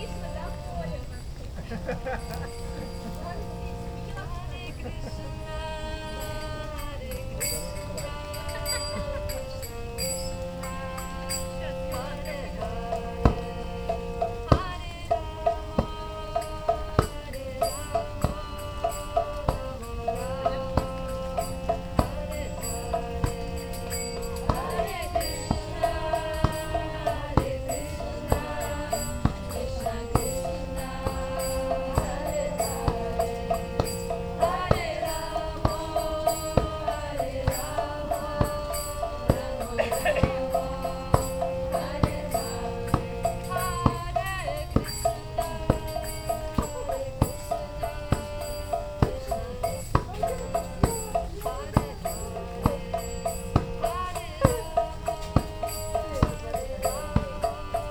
Moscow, Filevskaya naberezhnaya - Hare Krishnas in the Rain
Hare Krishnas, Park, Quay, Rain
Marantz PMD-661 int. mic.
20 August, ~20:00